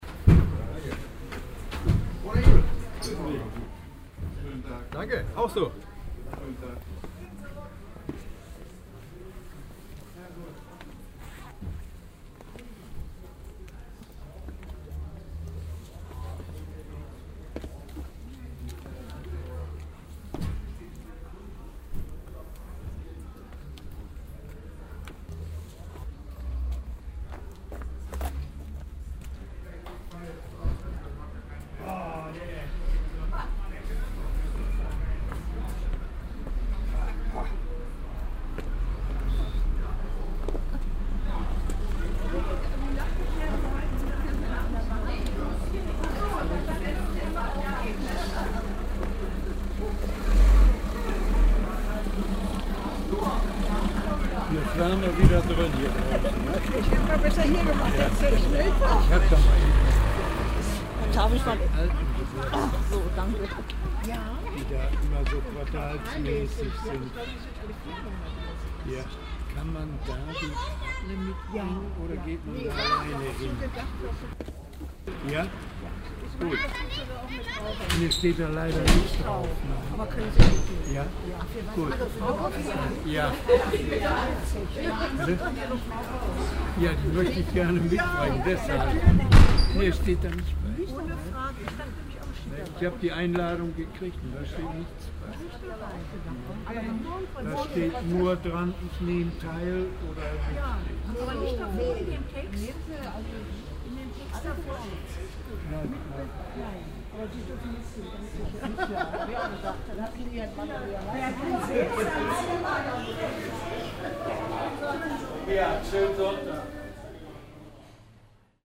kirchenbesucher auf platz vor kircheen eingang nach der sonntagsmesse im frühjahr 07
soundmap nrw: social ambiences/ listen to the people - in & outdoor nearfield recording